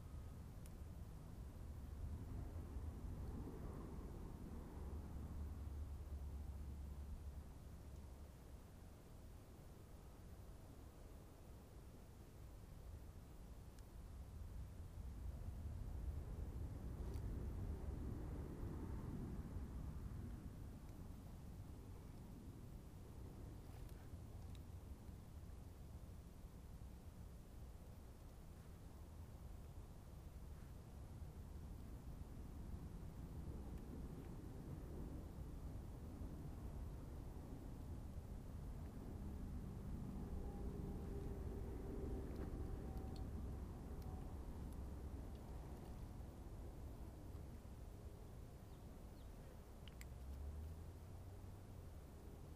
he swaps the batteries fast
Glorieta, NM, USA, June 2018